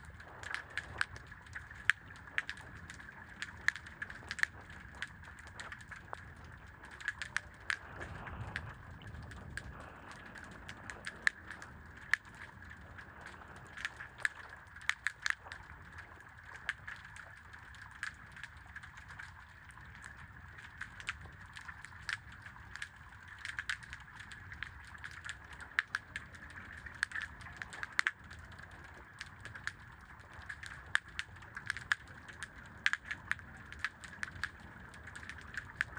Saint Louis, Senegal - Sardines Feeding, Senegal River
Hydrophones in the Senegal River recording the sounds of sardines feeding from the Faidherbe Bridge. Hydrophones by Jez Riley French, recorded on Zoom H4 recorder.